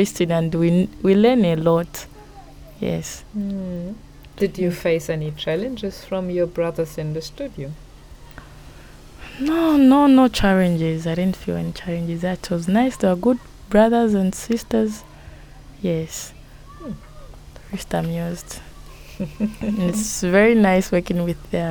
At the time I was staying with Zongwe FM, in August 2016, I found two young ladies working there in a group of five youths presenters. After making a recording with Patience Kabuku, here, we are sitting with Monica Sianbunkululu in the yard of Sinazongwe Primary listening to her story of how, as a lady, she found her way as a radio-maker with Zongwe FM. The children of the caretaker are playing in the yard; occasionally they try to attract our attention; we pause and listen to the girls singing across the yard... The radio helped her, she says, even to find a payed job as a data collector at the road construction company...
The recording forms part of THE WOMEN SING AT BOTH SIDES OF THE ZAMBEZI, an audio archive of life-story-telling by African women.
Sinazongwe, Zambia, 6 August